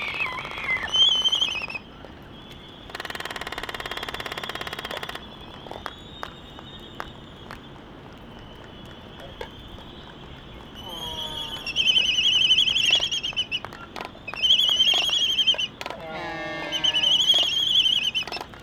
Laysan albatross dancing ... Sand Island ... Midway Atoll ... calls and bill clapperings ... open Sony ECM 959 one point stereo mic to Sony Minidisk warm ... sunny blustery morning ...
27 December 1997, 10:15